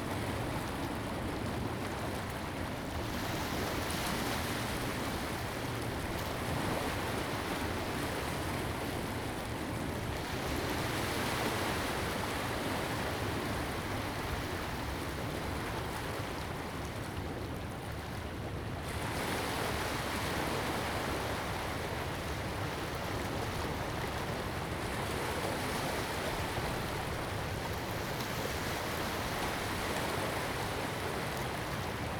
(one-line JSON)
{"title": "淡水區崁頂里, New Taipei City - at the seaside", "date": "2016-04-05 17:25:00", "description": "at the seaside, Sound waves, Aircraft flying through\nZoom H2n MS+XY", "latitude": "25.21", "longitude": "121.43", "altitude": "31", "timezone": "Asia/Taipei"}